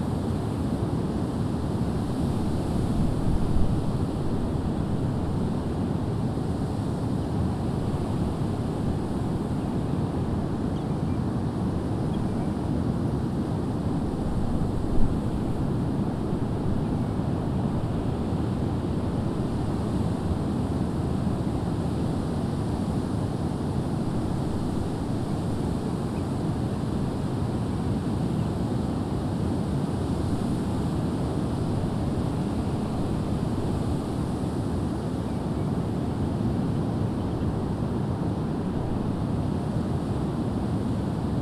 Unnamed Road, Prestatyn, UK - Reed Bed and Dunes, Lower Gronant
Late evening recording between reed beds and dunes at Gronant, Clwyd. Recorded on a Tascam DR-40 using the on-board microphones as a coincident pair with windshield.
9 August